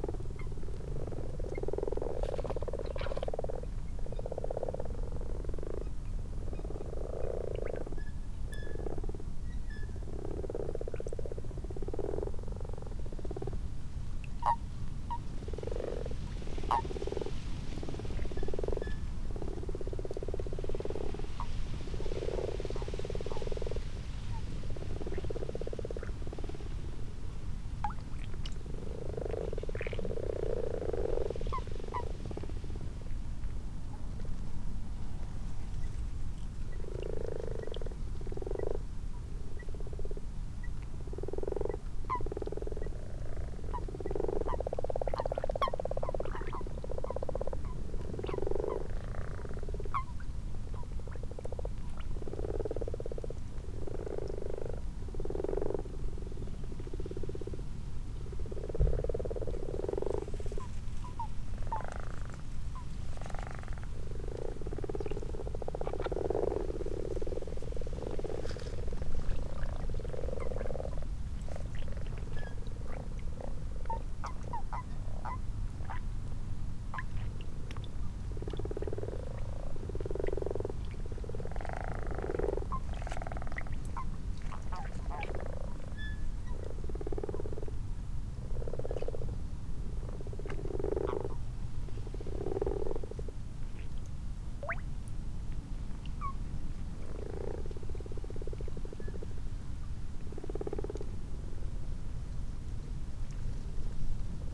Malton, UK - frogs and toads ...
common frogs and common toads in a garden pond ... xlr sass on tripod to zoom h5 ... time edited extended unattended recording ...
12 March 2022, 22:55